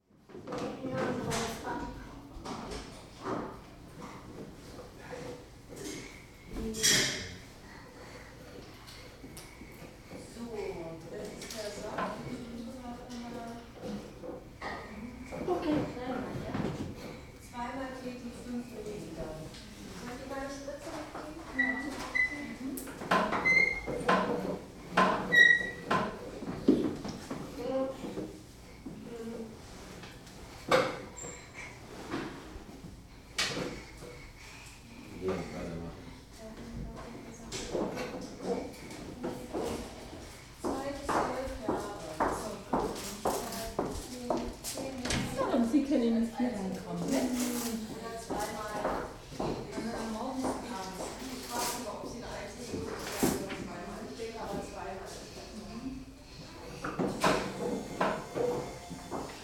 Gesundheitszentrum Bergmannstr. - warteraum / waiting room
03.03.2009 11:00 wartezimmer beim kinderarzt / pediatrist waiting room
Berlin, Deutschland, 3 March 2009